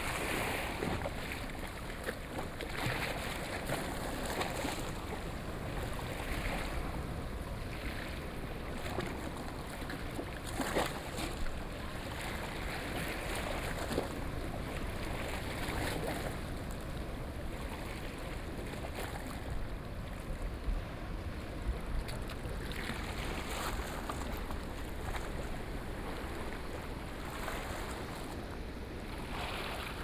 The sound of the sea seashores, Sound of the waves, Very hot weather
Sony PCM D50+ Soundman OKM II
Toucheng Township, Yilan County, Taiwan